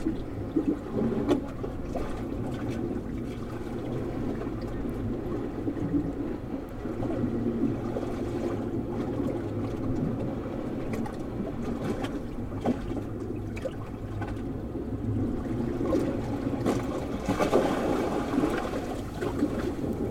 Fleury, France - recording in the rocks
recording the sea in the rocks
Captation ZoomH6
Occitanie, France métropolitaine, France